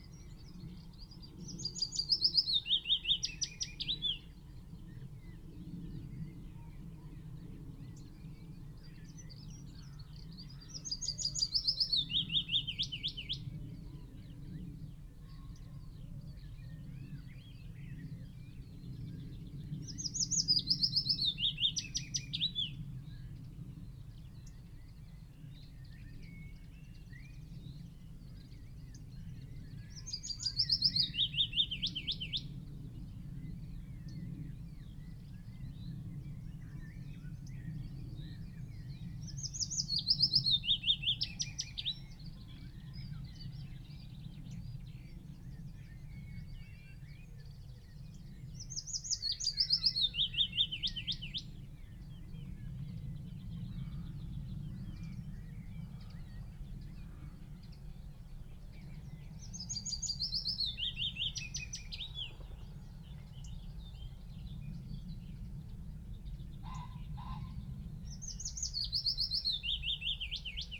Green Ln, Malton, UK - willow warbler song ...
willow warbler song ... dpa 4060s clipped to bag wedged in the fork of a tree to Zoom H5 ... bird calls ... song ... from ... magpie ... wood pigeon ... pheasant ... wren ... blackbird ... dunnock ... skylark ... blackcap ... yellowhammer ... red-legged partridge ... linnet ... chaffinch ... lesser whitethroat ... crow ... an unattended extended unedited recording ... background noise ... including the local farmer on his phone ...